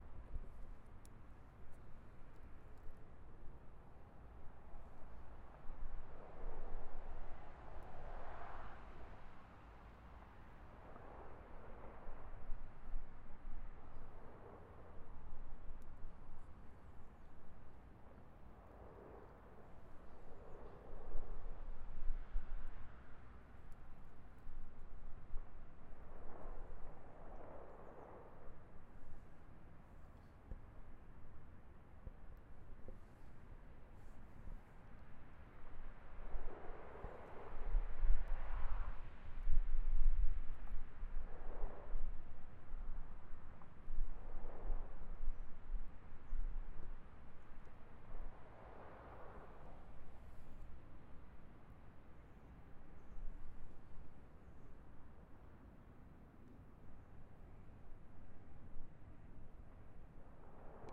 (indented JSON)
{
  "title": "Biblioteca di Fagnano Olona, Parco - Library small park, highway in the distance",
  "date": "2020-01-04 11:24:00",
  "description": "Recorded with a Zoom H6, XY capsule, 90°",
  "latitude": "45.67",
  "longitude": "8.87",
  "altitude": "258",
  "timezone": "Europe/Rome"
}